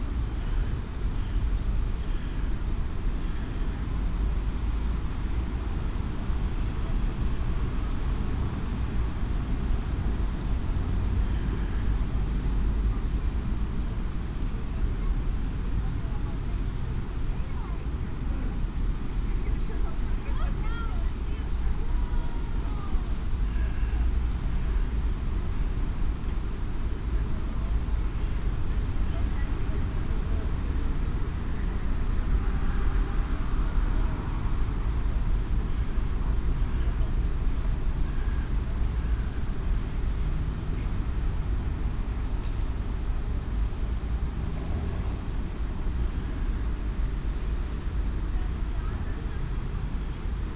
Vilnius, Lithuania, moving ice at Zverynas Bridge
Moving ice on river. Recorded with omni mics